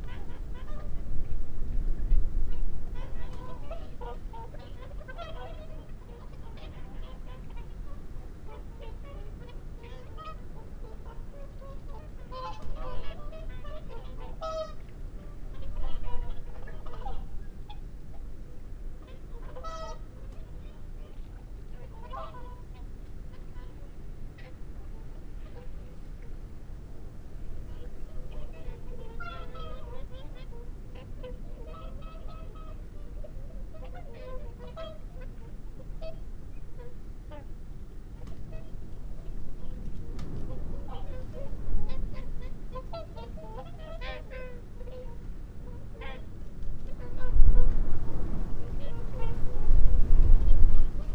Dumfries, UK - whooper swan call soundscape ...
whooper swan call soundscape ... xlr sass to Zoom h5 ... bird calls from ... curlew ... shoveler ... wigeon ... barnacle geese ... mallard ... lapwing ... unattended time edited extended recording ...